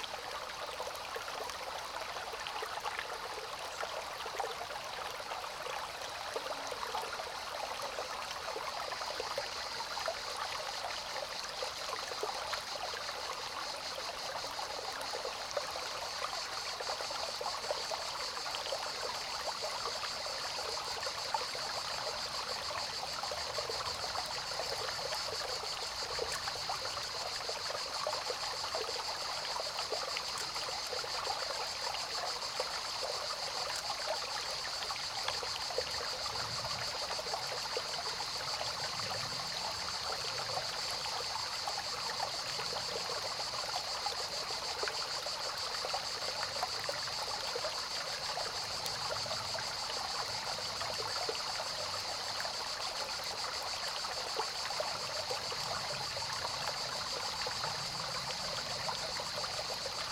Unnamed Road, Piedralaves, Ávila, España - El fluir del Río Escorial o Garganta de Valdetejo
En un fin de semana de retiro en una finca cercana... fuimos a dar un paseito hasta un afluente del Río Tiétar; el Río Escorial o también llamado Garganta de Valdetejo. No había nadie y pude sentarme en unas rocas en medio del río a grabar. No es muy hondo y se podía escuchar el fluir del agua cristalina... Chicharras... Naturaleza... Agua... y yo :)
Castilla y León, España, 10 July